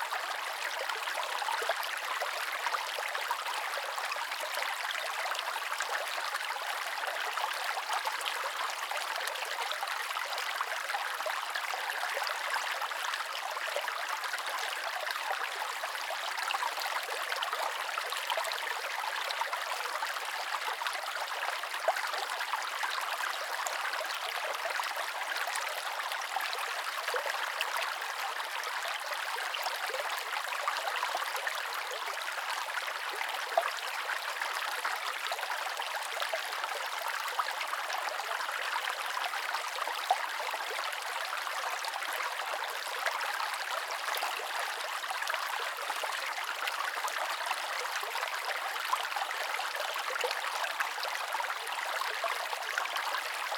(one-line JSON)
{"title": "Derrysallagh, Geevagh, Co. Sligo, Ireland - Babbling Stream", "date": "2019-06-19 12:00:00", "description": "Recorded in the middle of a calm sunny day. Zoom H1 positioned as close to the surface of the stream as possible.", "latitude": "54.09", "longitude": "-8.22", "altitude": "85", "timezone": "Europe/Dublin"}